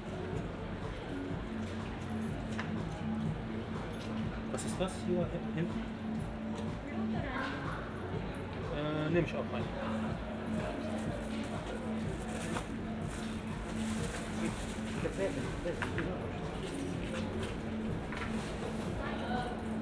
Berlin, Kotti, Bodegga di Gelato - the city, the country & me: in front of bottega del gelato
guests of the bottega ordering ice cream, passers-by
the city, the country & me: august 27, 2012
Berlin, Germany, 27 August 2012, ~19:00